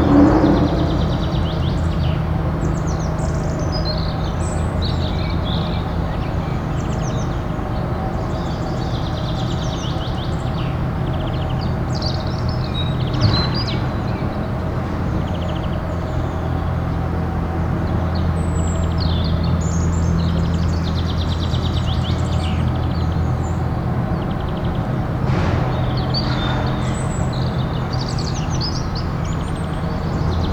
birds vs. excavators of a construction site close to the cemetery
the city, the country & me: april 10, 2013
Deutschland, European Union, 10 April 2013, 12:30